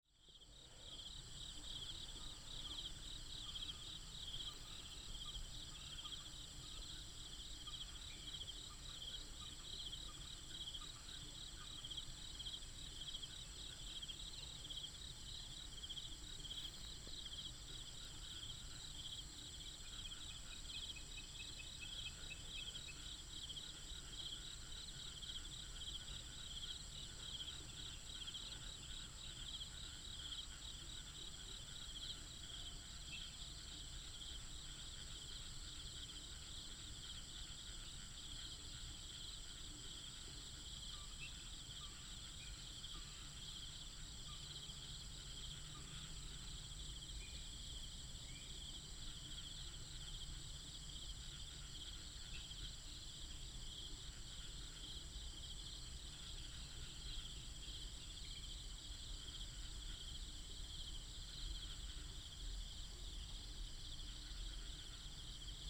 龍新路三水段, Longtan Dist., Taoyuan City - early morning
early morning, Next to the farm, Frogs, Insects, Binaural recordings, Sony PCM D100+ Soundman OKM II